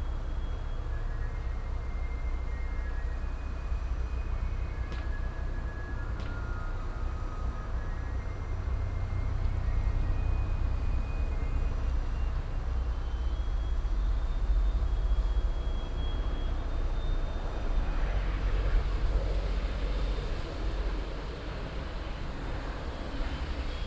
refrath, kornstrasse, alteisenhändlerwagen
die elektronische melodie des alteisenhändlers bei der fahrt durch das angrenzende viertel, morgens
abschliessend flugzeugüberflug
soundmap nrw:
social ambiences - topographic field recordings